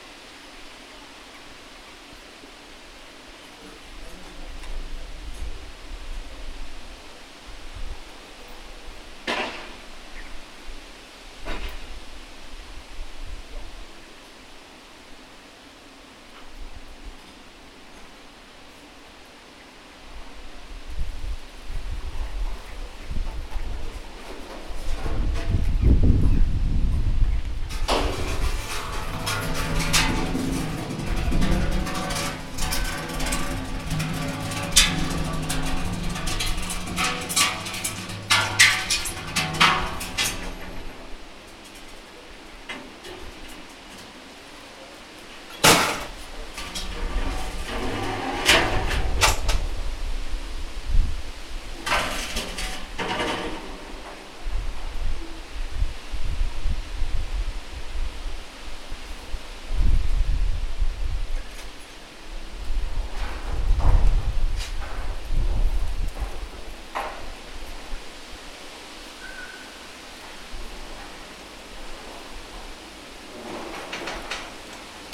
Gorzów Wlkp Zamoście ul.Wawrzyniaka, Gorzów Wielkopolski, Polska - Railway station and scrap metal purchase.
Old railway station on the south side of the Warta river. There is the scrap metal purchase next to it. The recording comes from a sound walk around the Zawarcie district. Sound captured with ZOOM H1.
lubuskie, RP, 13 August, 13:05